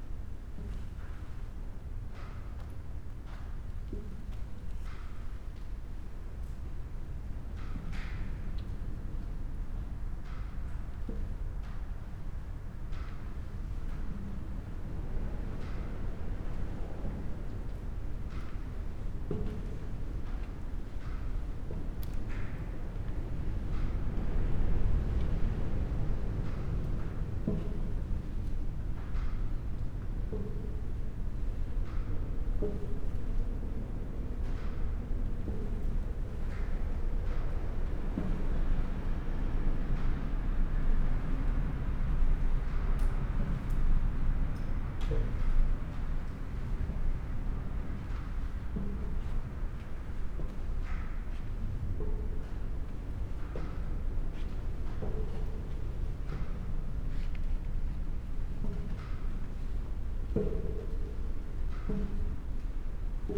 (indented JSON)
{
  "title": "Punto Franco Nord, house, Trieste, Italy - metal stairs",
  "date": "2013-09-11 15:12:00",
  "description": "walking the stairs on the ground floor of abandoned house number 25 in old harbor of Trieste, drops and winds through endless crevices",
  "latitude": "45.67",
  "longitude": "13.76",
  "altitude": "3",
  "timezone": "Europe/Rome"
}